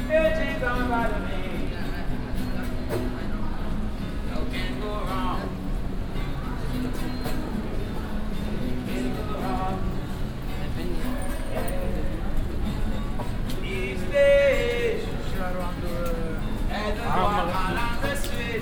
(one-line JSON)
{"title": "cologne, schildergasse, street musician", "date": "2009-06-19 12:27:00", "description": "each day as I am walking on the street, jamaican looking street musicain playing song mantra in the shopping zone\nsoundmap nrw: social ambiences/ listen to the people in & outdoor topographic field recordings", "latitude": "50.94", "longitude": "6.96", "altitude": "62", "timezone": "Europe/Berlin"}